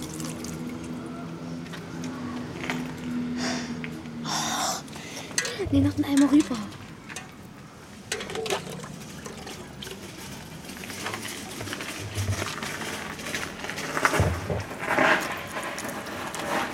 kinder bauen aus holzteilen und mit eimern und blechbüchsen ein aquaedukt und probieren es dann aus. stimmen, wasserplätschern, lachen, verkehr, passanten.